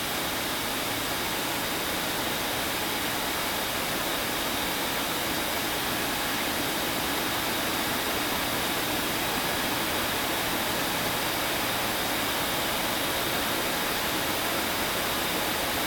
The University of Texas at Austin, Austin, TX, USA - Chilling Station No. 04
Recorded with a Marantz PMD661 and a pair of DPA 4060s.
11 July